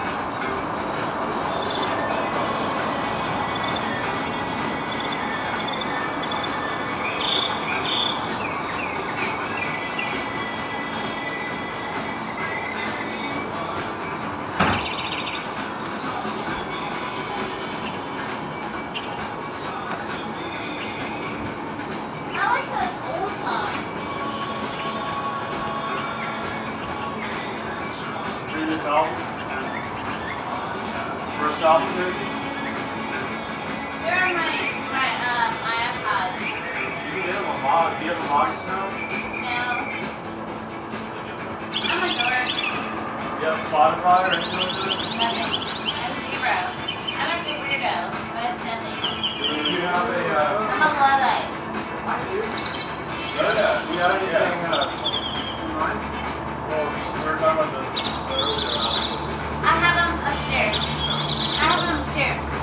{
  "title": "Mockingbird Serenades Dance Party of Three, Neartown/ Montrose, Houston, TX, USA - Mockingbird at Susan's",
  "date": "2013-04-06 02:53:00",
  "description": "Northern Mockingbirds are Urban-Positive! This guy was blasting his best for many hours while we drank and danced around my friend's apartment until nearly dawn.\nSony PCM D50",
  "latitude": "29.74",
  "longitude": "-95.39",
  "altitude": "17",
  "timezone": "America/Chicago"
}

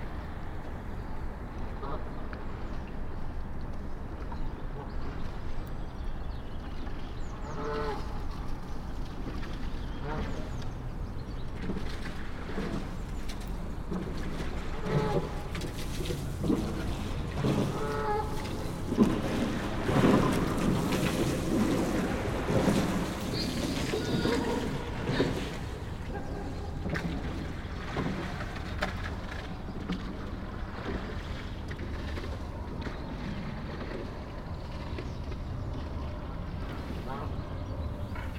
{
  "title": "Taplow. Bridge over the Thames. - Taplow. Bridge over the Thames",
  "date": "2011-03-05 10:51:00",
  "description": "Rowers on the River Thames passing under the railway bridge (The brick bridge was designed by Isambard Kingdom Brunel and is commonly referred to as 'The Sounding Arch' due to its' distinctive echo).",
  "latitude": "51.52",
  "longitude": "-0.70",
  "altitude": "26",
  "timezone": "Europe/London"
}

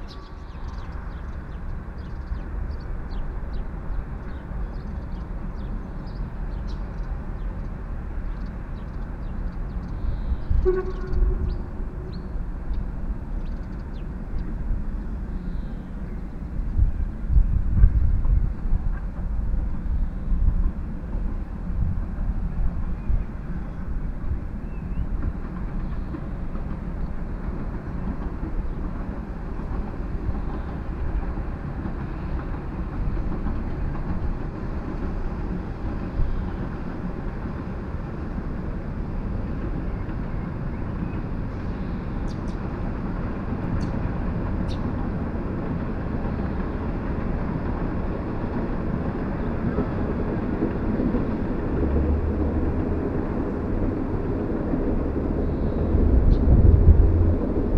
{
  "title": "Zittau, Deutschland - Hochwaldstraße",
  "date": "2013-05-11 12:30:00",
  "description": "Hochwaldstraße, 12:30 Uhr, PCM Rekorder",
  "latitude": "50.89",
  "longitude": "14.80",
  "altitude": "242",
  "timezone": "Europe/Berlin"
}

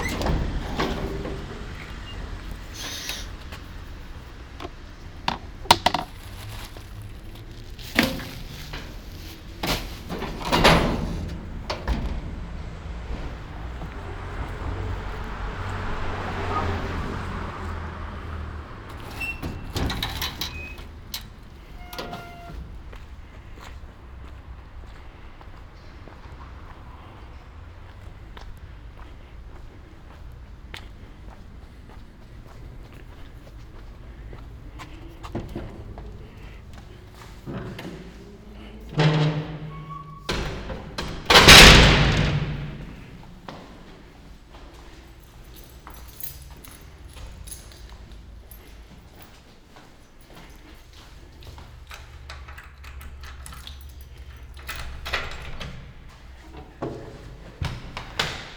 {
  "title": "Ascolto il tuo cuore, città. I listen to your heart, city. Chapter LXXXV - Round Midnight on the road again in the time of COVID19: soundscape.",
  "date": "2021-06-10 23:47:00",
  "description": "\"Round Midnight on the road again in the time of COVID19\": soundscape.\nChapter CLXXV of Ascolto il tuo cuore, città. I listen to your heart, city\nFriday, June 10th, 2021. The third night of new disposition for curfew at midnight in the movida district of San Salvario, Turin. More than one year and two months after emergency disposition due to the epidemic of COVID19.\nStart at 11:48 p.m. end at 00:18 a.m. duration of recording 30’22”\nThe entire path is associated with a synchronized GPS track recorded in the (kmz, kml, gpx) files downloadable here:",
  "latitude": "45.06",
  "longitude": "7.68",
  "altitude": "247",
  "timezone": "Europe/Rome"
}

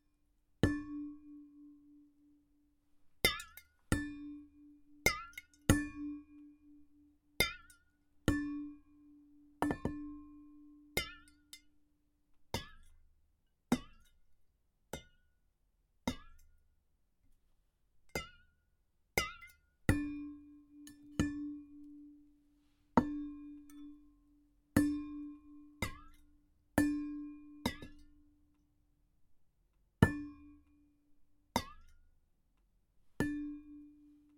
{
  "title": "Kattengat, Amsterdam - Two water bottles",
  "date": "2015-12-14 19:45:00",
  "description": "Two water bottles, one empty and the other with a little bit of water banged against a wooden table in no particular rhythm.",
  "latitude": "52.38",
  "longitude": "4.89",
  "altitude": "8",
  "timezone": "Europe/Amsterdam"
}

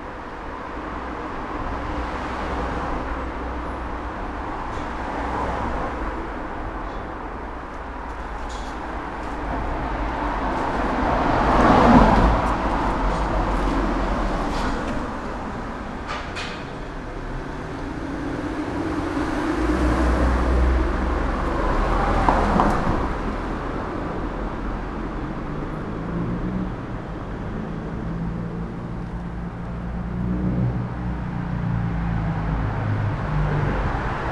{
  "title": "the narrowest one of maribor streets - friday evening",
  "date": "2014-08-14 20:56:00",
  "latitude": "46.56",
  "longitude": "15.64",
  "altitude": "264",
  "timezone": "Europe/Ljubljana"
}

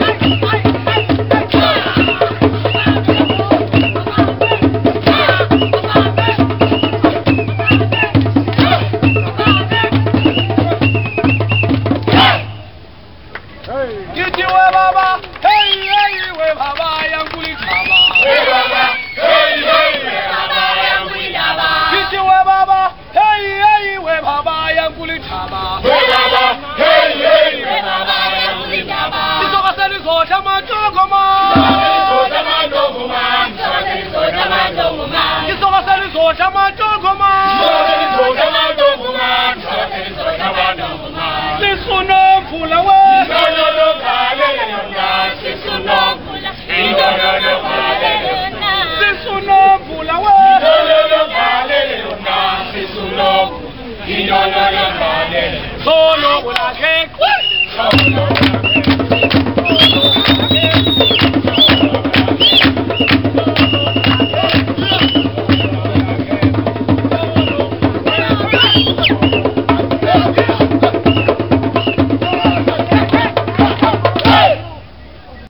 {
  "title": "Cape Town, V&A Waterfront, Street Performers",
  "date": "2007-05-14 14:09:00",
  "description": "Street performers at the V&A Waterfront, Cape Town",
  "latitude": "-33.90",
  "longitude": "18.42",
  "altitude": "9",
  "timezone": "Africa/Johannesburg"
}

